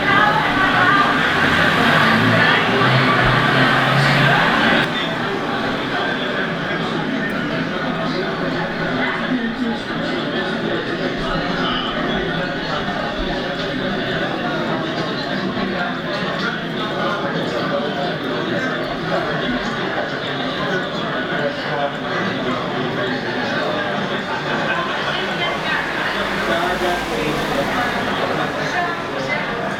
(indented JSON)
{
  "title": "Vápenice, Bus Stop",
  "date": "2011-05-18 11:06:00",
  "description": "VNITRUMILIMETRU\nIts site-specific sound instalation. Sounds of energic big cities inside bus stops and phone booths in small town.\nOriginal sound of Vencouver by\nFrank Schulte",
  "latitude": "49.47",
  "longitude": "17.11",
  "altitude": "227",
  "timezone": "Europe/Prague"
}